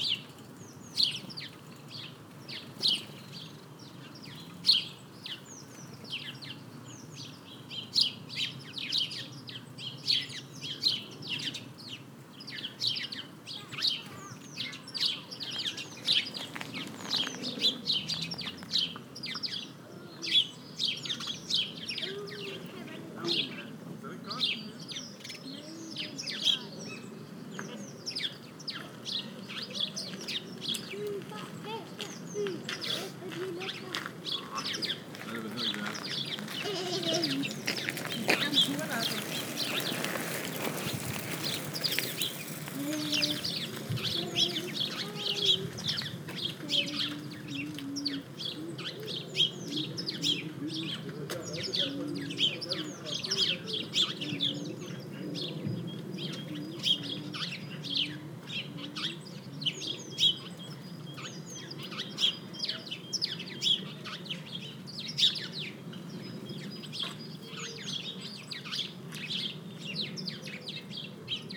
København, Denmark - Sparrows
A bunch of sparrows, discussing into a grove. Lot of bikes passing, and some pedestrians. As there's no road, the ground is gravels.
15 April, 16:00